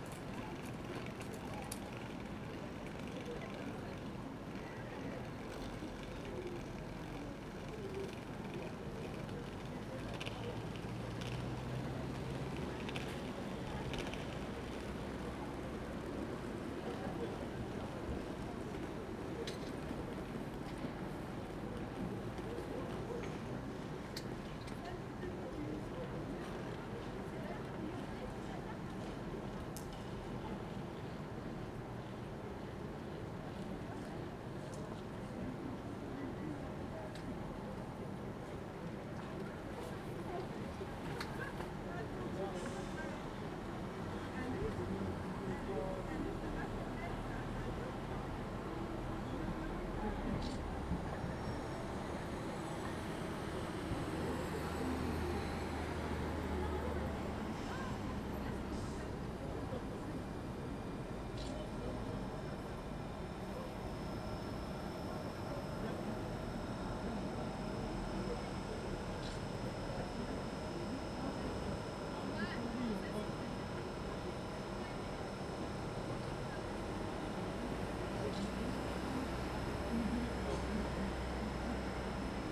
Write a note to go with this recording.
"Place de la Gare, Grenoble, evening curfew in the time of COVID19": Soundscape. Chapter 172-bis (add on august 18 2022) of Ascolto il tuo cuore, città. I listen to your heart, city, Thursday, June 3rd, 2021: recording from hotel room window in front of the Grenoble railway station during evening curfew. Almost than one year and four months after emergency disposition due to the epidemic of COVID19. Start at 9:31 p.m. end at 9:52 p.m. duration of recording 21’20”